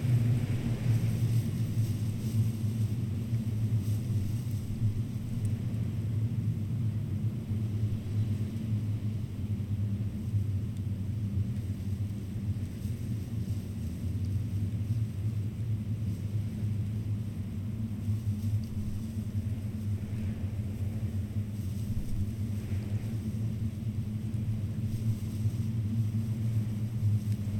Vyzuoneles, Lithuania, wind on wires
Windy day, low hum of high voltage wires